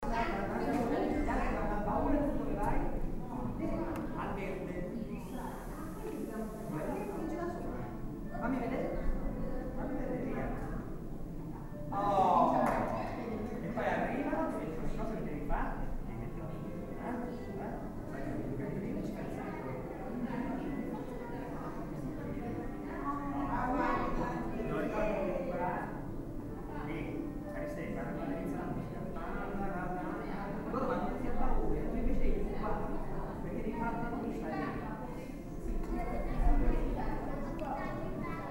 kasinsky: a day in my life
...actors arrived. Organize themselves before the show...